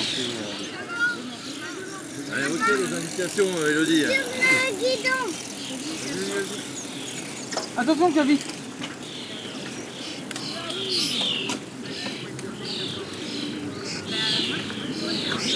La Couarde-sur-Mer, France - Common Starlings
A big Common Starling colony, singing in the marshland. A lot and a lot of wind in the grass. And also, a very consequent mass of tourists cycling.